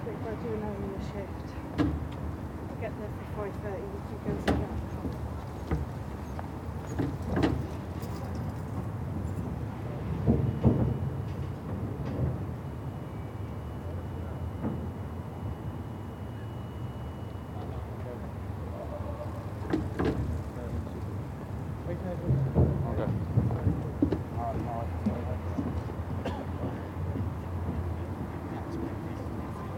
{"title": "The pedestrian bridge beside the River Kennet, Reading, UK - bikes and walkers sounding the bridge", "date": "2017-04-04 18:03:00", "description": "There is a wooden bridge crossing a small tributary that feeds into the River Kennet. The bridge and the path beside the water are pedestrianised but as this area's not far from the IDR, you can hear the rumble of traffic from the ring road. However, you can also hear the waterfowl on the river, and the different bicycles, shoes and voices of the folk who use the bridge. Recorded of an evening when it was quite sunny and convivial in town, using the onboard mics on Edirol R-09. I love the variety of different bicycle sounds and shoes, and the way the bridge booms whenever anyone rides over it and the mix of accents and voices in our town.", "latitude": "51.45", "longitude": "-0.96", "altitude": "39", "timezone": "Europe/London"}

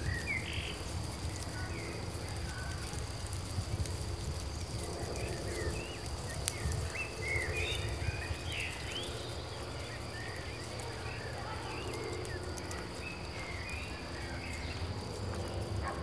{"title": "Park Sanssouci, Potsdam - fence with plastic flaps", "date": "2014-06-01 17:58:00", "description": "A fence with hundreds of plastic scraps attached to it. the flaps making a gentle crunching, sizzling sound in the wind.", "latitude": "52.40", "longitude": "13.03", "altitude": "31", "timezone": "Europe/Berlin"}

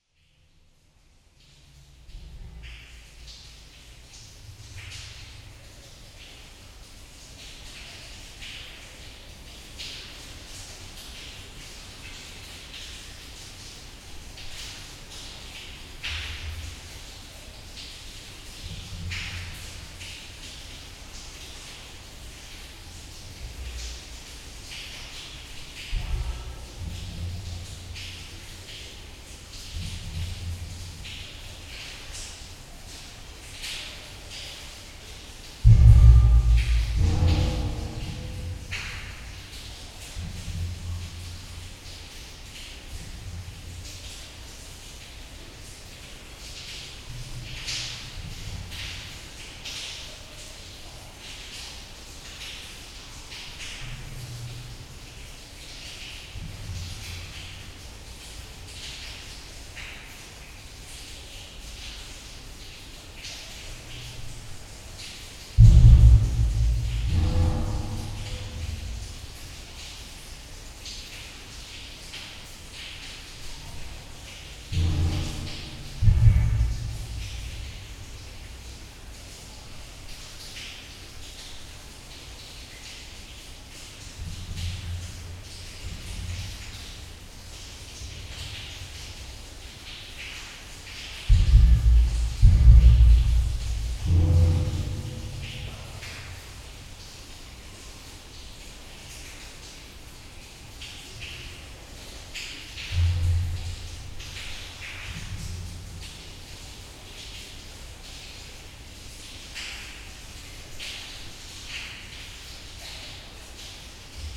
{"title": "Valenciennes, France - Sewers soundscape", "date": "2018-12-24 08:40:00", "description": "Soundscape of the Valenciennes sewers, while visiting an underground river called Rhonelle.", "latitude": "50.36", "longitude": "3.53", "altitude": "33", "timezone": "Europe/Paris"}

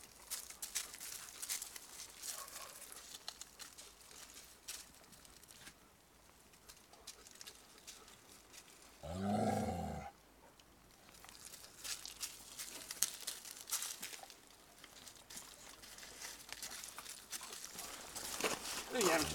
{"title": "Longyearbyen, Svalbard and Jan Mayen - Stefano´s dog kennel", "date": "2011-10-17 08:10:00", "description": "A morning in the kennel where tourguide Stefano has his Greenland dogs.", "latitude": "78.22", "longitude": "15.67", "timezone": "Arctic/Longyearbyen"}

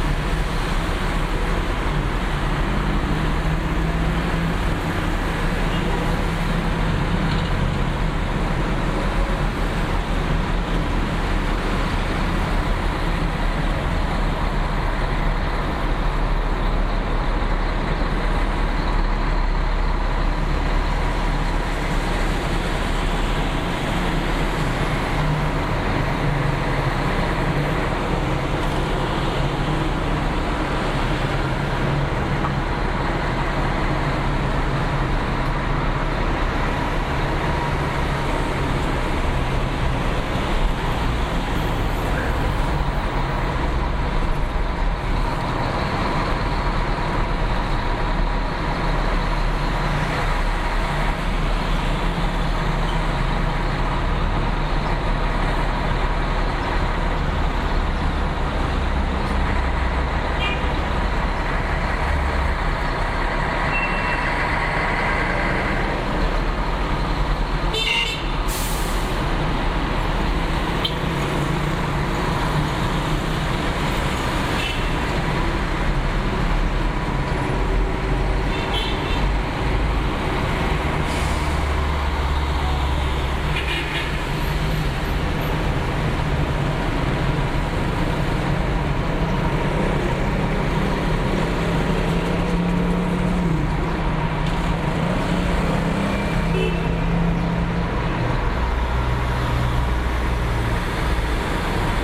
{"title": "Al Sok Al Siahi, Luxor City, Luxor, Luxor Governorate, Ägypten - Luxor Streetnoise", "date": "2019-03-04 08:40:00", "description": "Recorded from hotel balcony in the morning.", "latitude": "25.71", "longitude": "32.64", "altitude": "86", "timezone": "GMT+1"}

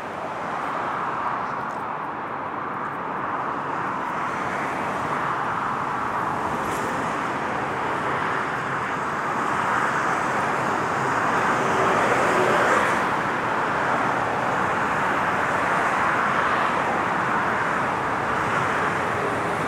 {"title": "footbridge, Hoo Peninsula, Kent, UK - Leaving Strood via Higham", "date": "2021-08-20 12:30:00", "description": "Walking across planted fields and over the bridge, on to a bridleway parallel to the road on the other side. Note how an earth bank provides significant attenuation to traffic noise as the bridleway inclines downwards relative to the road.", "latitude": "51.41", "longitude": "0.48", "altitude": "62", "timezone": "Europe/London"}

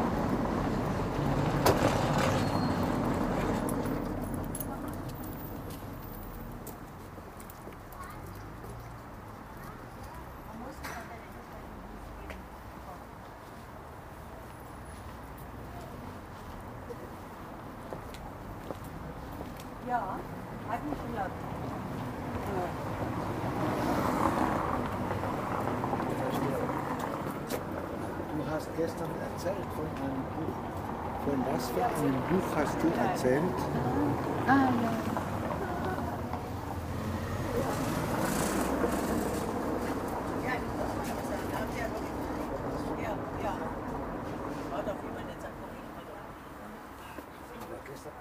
{"title": "berlin nikolassee, outside the station", "date": "2009-04-29 20:13:00", "description": "recorded nov 14th, 2008.", "latitude": "52.43", "longitude": "13.19", "altitude": "41", "timezone": "GMT+1"}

